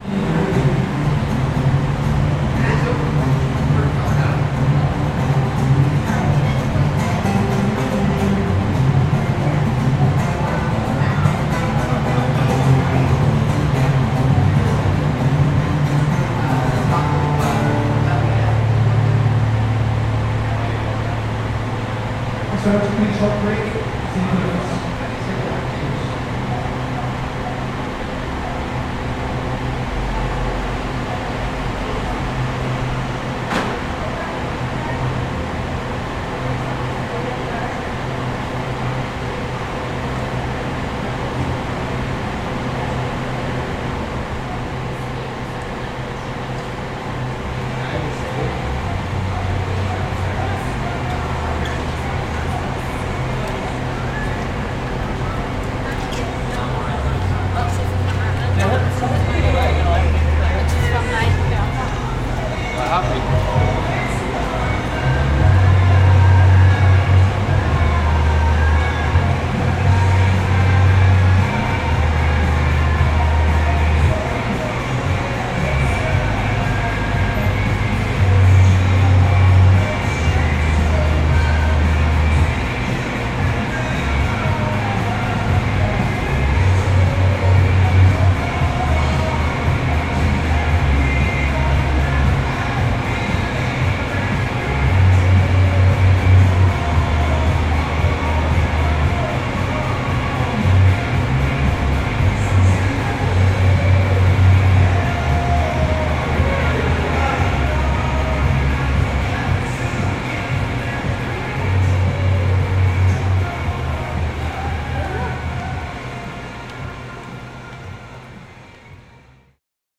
The Entries
Quite an awkward moment when you’re standing with your recording equipment near one of the entry points to the bars in the alley. There was live music that then switched to streaming music, people walking in and out of the alley, trying to say silly things into the microphone, the few odd stares, and I wanted to keep my distance. I just let the recorder pick up all these different sound interactions that evolved in this tiny narrow space.